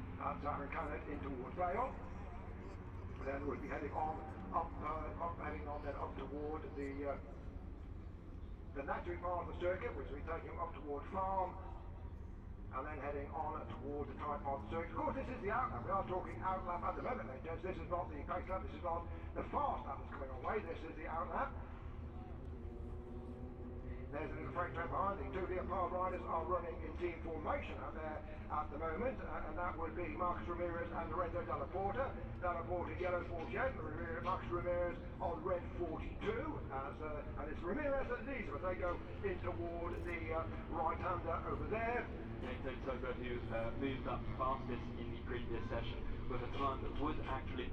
Silverstone Circuit, Towcester, UK - british motorcycle grand prix 2019 ... moto three ... q2 ...
british motorcycle grand prix ... moto three ... qualifying two ... and commentary ... copse corner ... lavalier mics clipped to sandwich box ...
England, UK, 24 August